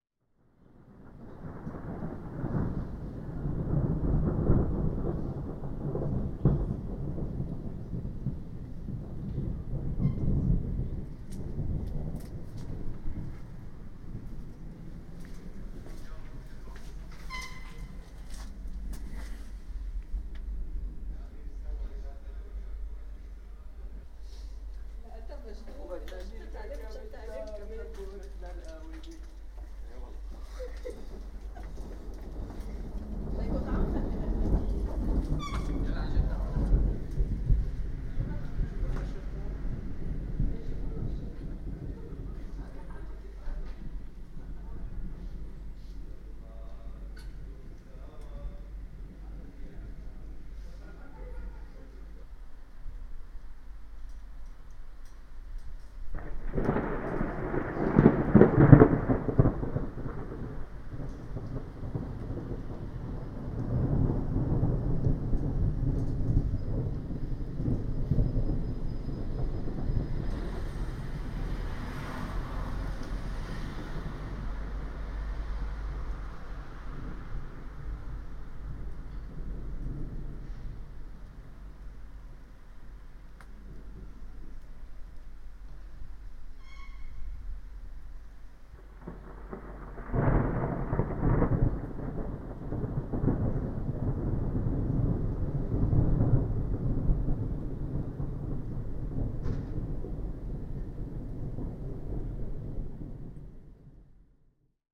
Oxford, Oxfordshire, UK - Storm during a late night world cup match
I rushed outside during half time of a world cup match this summer.....
15 June, ~00:00